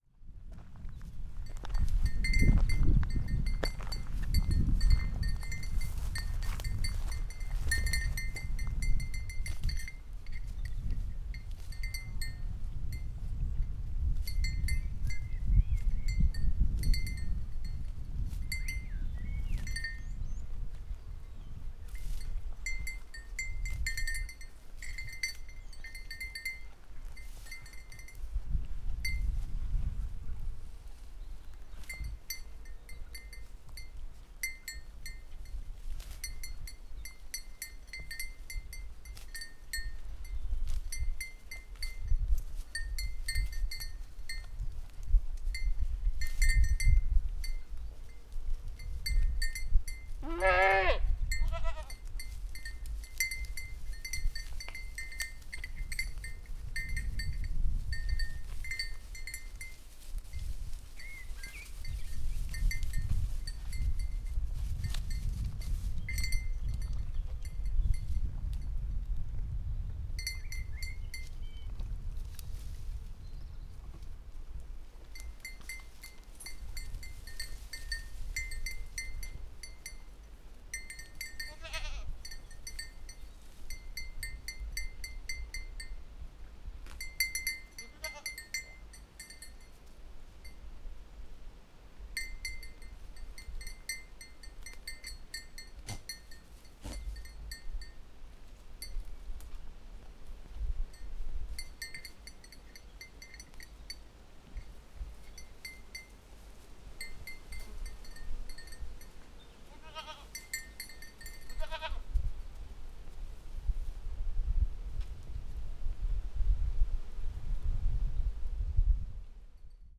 south from Machico - goat bells
a few goats grazing and ringing their bells while moving about.